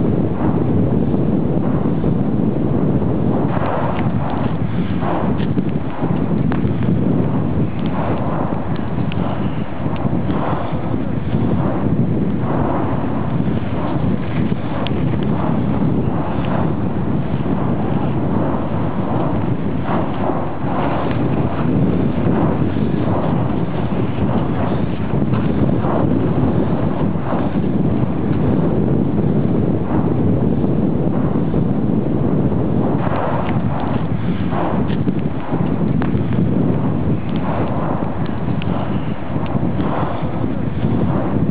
Suðurland, Island - Volcanic Eruption on the Fimmvörduháls
This is the sound of the volcanic eruption on Fimmvörduhals at the Eyjafjallajökull volcano in march 2010.
Iceland, March 2010